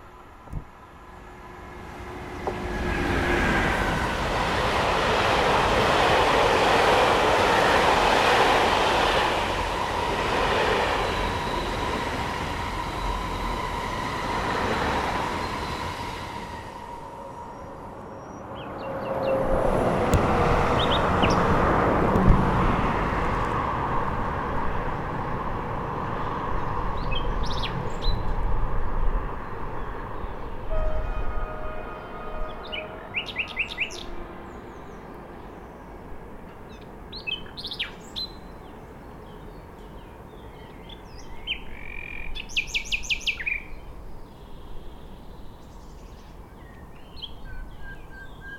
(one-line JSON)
{"title": "Entrelacs, France - Rossignol ferroviaire", "date": "2022-06-15 10:00:00", "description": "Un rossignol chante dans un buisson entre la voie ferrée et les bords du lac du Bourget nullement effrayé par les passages de trains. Circulation des véhicules, sur la RD991 quelques instants laissés à l'expression naturelle.", "latitude": "45.78", "longitude": "5.86", "altitude": "262", "timezone": "Europe/Paris"}